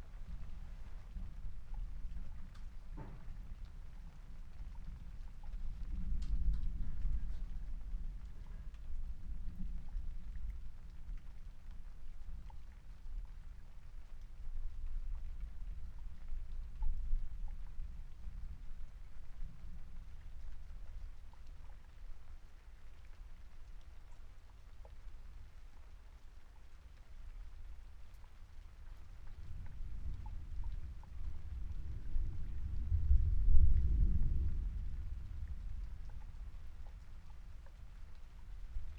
Luttons, UK - inside shed ... outside thunderstorm ...
inside shed ... outside thunderstorm ... xlr SASS on tripod to Zoom F6 ...
Malton, UK, July 2020